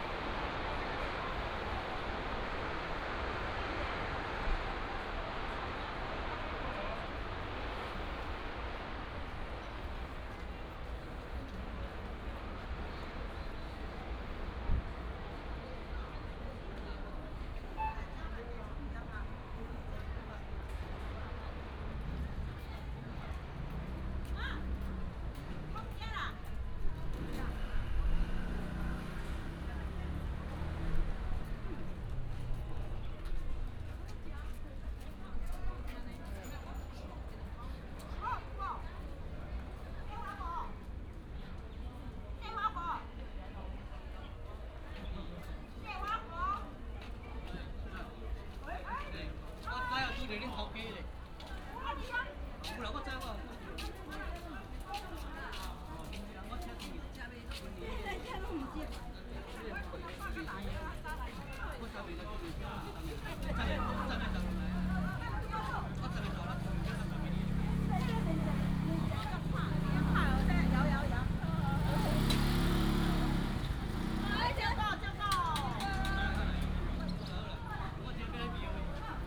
五雲宮活動中心, 白沙屯 Tongxiao Township - In the parking lot
Matsu Pilgrimage Procession, Crowded crowd, Fireworks and firecrackers sound